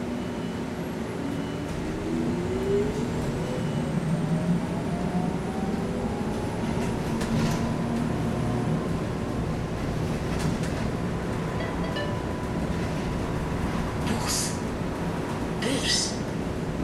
Bus, Brussel, Belgium - Bus 33 between Louise and Dansaert
Windows open, air vconditionning in the small electric bus.
Tech Note : Olympus LS5 internal microphones.
Brussel-Hoofdstad - Bruxelles-Capitale, Région de Bruxelles-Capitale - Brussels Hoofdstedelijk Gewest, België / Belgique / Belgien, 24 May 2022